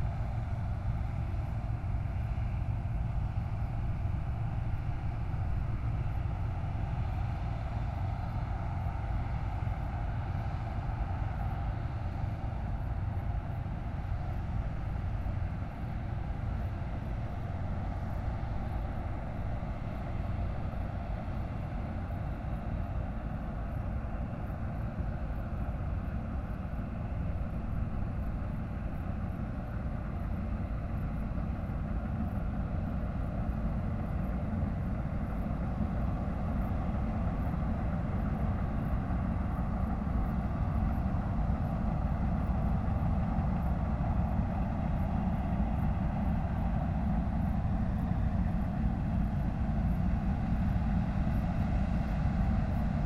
A combine harvester in the fields, harvesting the wheat.
Mont-Saint-Guibert, Belgium, 14 August